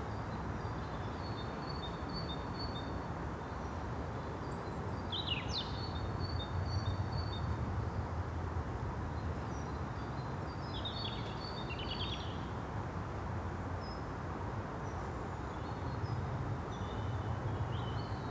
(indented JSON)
{
  "title": "Leigh Woods National Nature Reserve, Bristol, UK - Birdcalls after the rain",
  "date": "2015-02-13 16:50:00",
  "description": "This recording was taken on a raised embankment that used to be part of an iron age hill fort, so I was almost level with the tree canopy.\nAs well as the birds you can hear the drone of traffic on the road in the nearby gorge, and at around 2 mins some people jog past on the path to the left.\n(rec. zoom H4n)",
  "latitude": "51.46",
  "longitude": "-2.64",
  "altitude": "108",
  "timezone": "Europe/London"
}